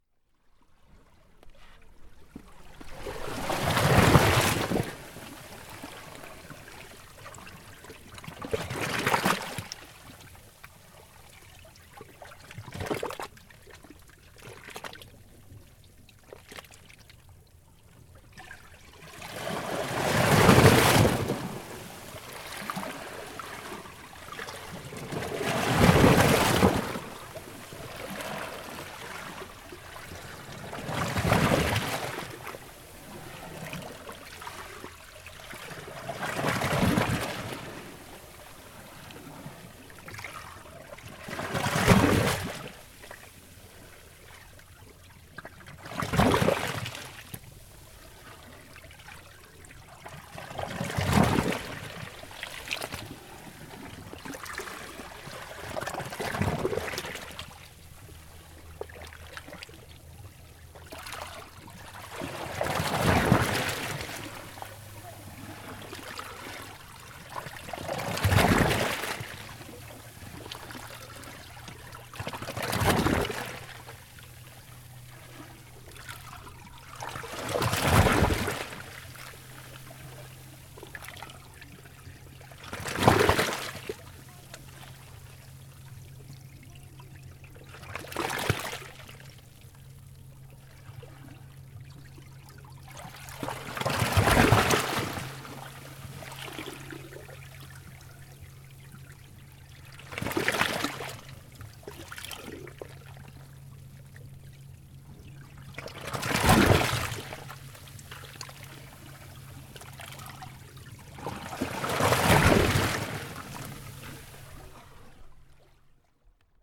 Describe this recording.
Iles du Frioul (Marseille), entre les rochers...